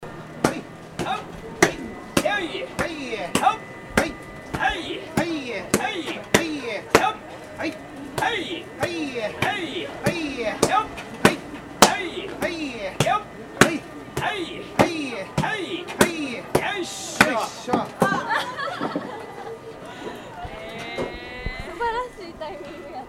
pounding mochi in Nara by Biagio Azzarelli